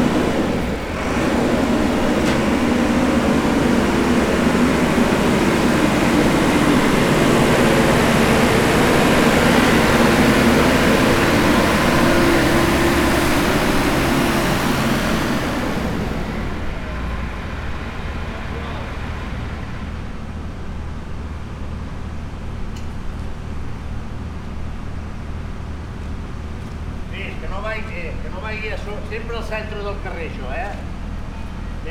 {"title": "SBG, Plaça Nueva - Obras", "date": "2011-08-21 09:00:00", "description": "Desmontaje de las estructuras de la antigua fábrica, parte del trabajo de acondicionamiento para acometer la construcción de lo que será la nueva plaza del pueblo.", "latitude": "41.98", "longitude": "2.17", "altitude": "878", "timezone": "Europe/Madrid"}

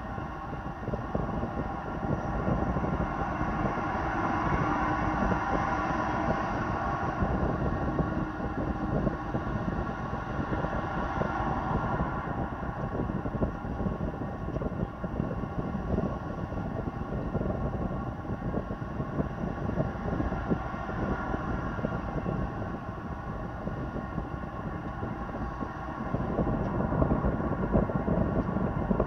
{"title": "Galatas, Crete, on handrails of a pedestrian bridge", "date": "2019-05-02 13:40:00", "description": "contact microphones on a handrails of pedestrian bridge. very windy day", "latitude": "35.51", "longitude": "23.96", "altitude": "5", "timezone": "Europe/Athens"}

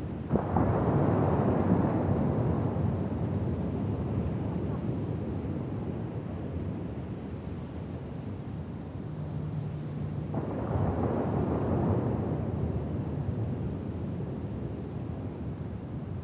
{"title": "On the sand, St Ninian's Isle, Shetland, UK - The wind blowing over a beer bottle buried in the sand", "date": "2013-08-04 20:49:00", "description": "After a lovely walk around the headland trying to photograph and record sheep, myself, Kait and Lisa had a picnic. One feature of this picnic was some tasty Shetland ale, and carrying the empty glass beer bottle back across the island, I was delighted by the sound of the wind playing over the top of it, and the flute-like tones that emanated. When we got down to the beach, I searched for a spot in the sand where the bottle might catch the wind in a similar way, and - once I found such a spot - buried it there. I popped my EDIROL R-09 with furry Rycote cover down in the sand beside it, and left everything there to sing while I went to record the sand and the water by the shoreline. When I listened back to the recording, I discovered that a small fly had taken an interest in the set up, and so what you can hear in this recording are the waves bearing down on the beach, the wind blowing across the emptied beer bottle, and the tiny insect buzzing around near the microphones.", "latitude": "59.97", "longitude": "-1.34", "timezone": "Europe/London"}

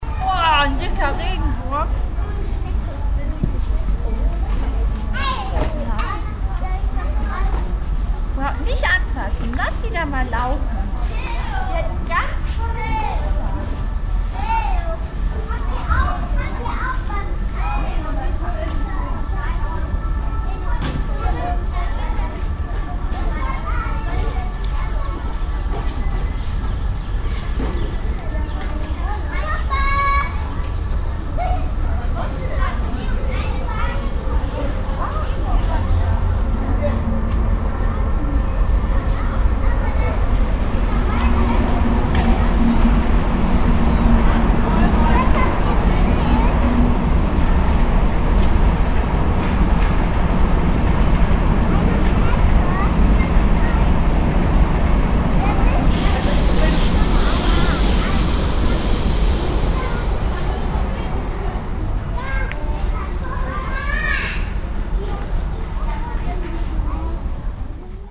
{"title": "cologne, stadtgarten kindergarten st alban", "date": "2008-05-06 21:37:00", "description": "stereofeldaufnahmen im september 07 - morgens\nproject: klang raum garten/ sound in public spaces - in & outdoor nearfield recordings", "latitude": "50.95", "longitude": "6.94", "altitude": "52", "timezone": "Europe/Berlin"}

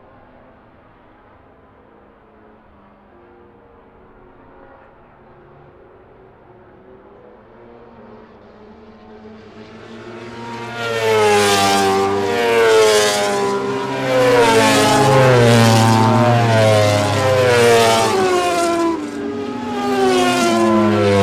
{"title": "Unnamed Road, Derby, UK - British Motorcycle Grand Prix 2004 ... moto grandprix ...", "date": "2004-07-23 13:50:00", "description": "British Motorcycle Grand Prix 2004 qualifying ... part one ... one point stereo mic to minidisk ...", "latitude": "52.83", "longitude": "-1.37", "altitude": "74", "timezone": "Europe/London"}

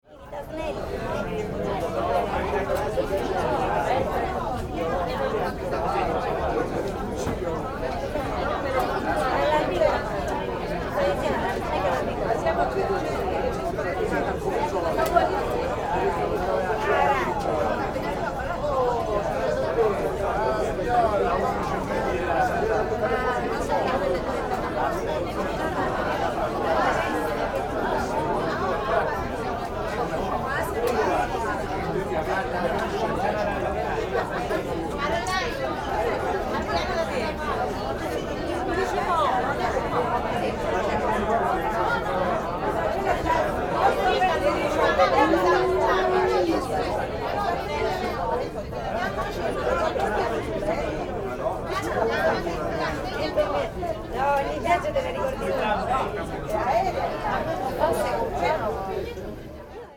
{"title": "lipari harbour - ferry boat cafe bar before departure", "date": "2009-10-24 10:25:00", "description": "on stormy days, only the big ferries operate between the islands. sirenar ferry boat 4th floor cafe bar ambience, departure.", "latitude": "38.47", "longitude": "14.96", "altitude": "4", "timezone": "Europe/Berlin"}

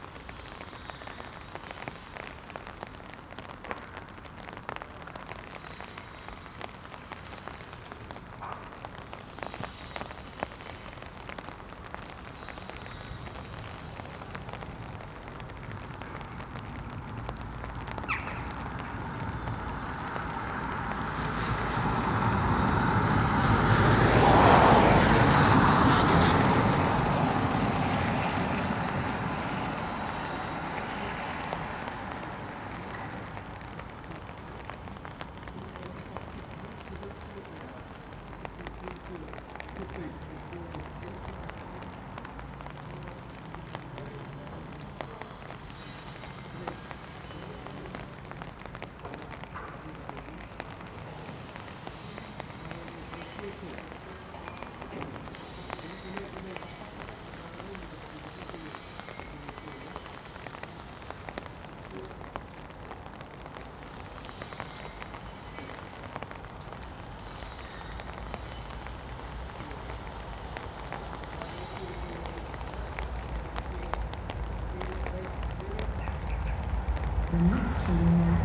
{"title": "Contención Island Day 15 inner east - Walking to the sounds of Contención Island Day 15 Tuesday January 19th", "date": "2021-01-19 10:36:00", "description": "The Drive Moor Crescent Moorfield Ilford Road\nTwo men with hi-viz stripes\nwork on the platforms\nAcross the track a dunnock\npicks its way through the shrubbery\nThe outbound train has nine passengers\na decreased service", "latitude": "55.00", "longitude": "-1.61", "altitude": "63", "timezone": "Europe/London"}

